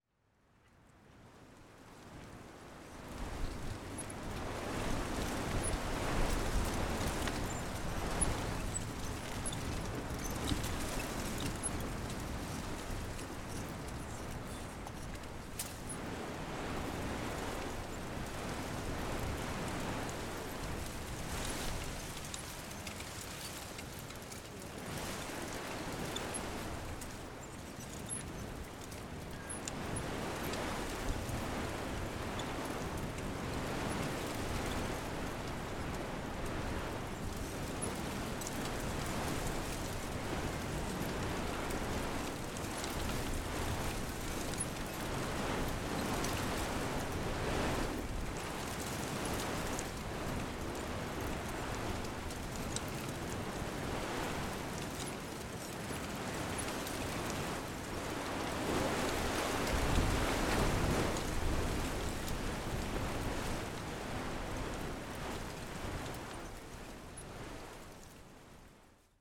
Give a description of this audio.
Le vent fort fait grincer la haie d'arbuste, des rafales sur l'herbe du jardin. The strong wind creaks the shrub hedge, bursts on the grass of the garden. April 2019. /Zoom h5 internal xy mic